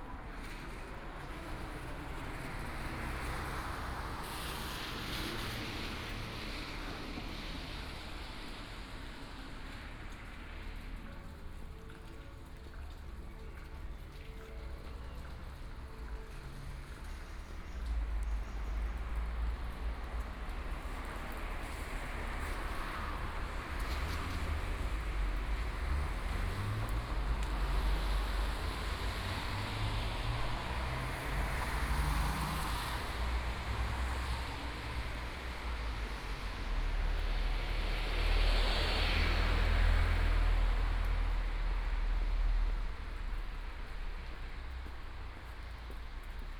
Bavariaring, Munich 德國 - Walking the streets in the morning
Morning, walking the streets, Traffic Sound, Voice traffic lights
Munich, Germany, 11 May, 08:27